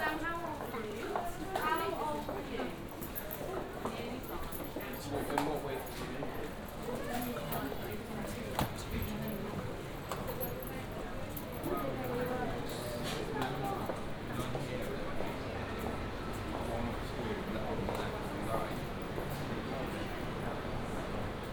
Covered Markets, Oxford, UK - market walk, ambience
short walk in the Covered Markets, near closing time
(Sony D50, OKM2)
15 March 2014, 16:30